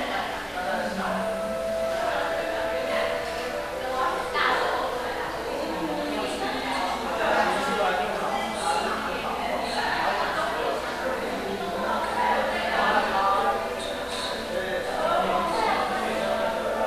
Burger King near TP Station